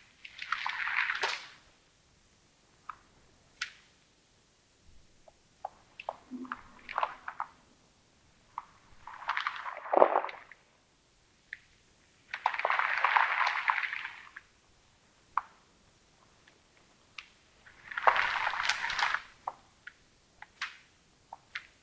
Mont-Saint-Guibert, Belgique - Earthworms eating
On the all-animals-eating collection, this strange recording is about earthworms. These are earthworms eating. There's normally no noise or quite nothing with earthworm, but I was completely atracted to disclose their secret life. So, to succeed, I made a strategy. I buried two adjoined contact microphones in a very packed mold. Around the microphones, I disposed a layer of coffee ground, as I know earthworm love wet and cold coffee ground. It was a trap and a gift to them, in aim to attract them near the microphones. I deposited three nervous earthworm on the summit of a jam jar. I let them dig in the mold. They immediatly plunged near the coffee and eat it. It makes this extremely strange sound.
On this recording, I made no alteration, but in fact I really can't explain why it makes these kind of noises. Perhaps ground small collapses and crushing is a kind of important thing in a earthworm existence.